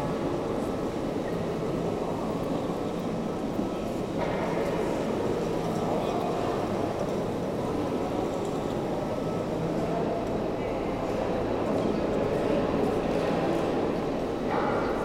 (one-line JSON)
{"title": "Gent, België - Gent station", "date": "2019-02-16 15:45:00", "description": "The old Sint-Pieters station of Ghent. Lot of intercity trains coming, and after, an escalator in alarm. Noisy ambience for a Saturday afternoon.", "latitude": "51.04", "longitude": "3.71", "altitude": "12", "timezone": "Europe/Brussels"}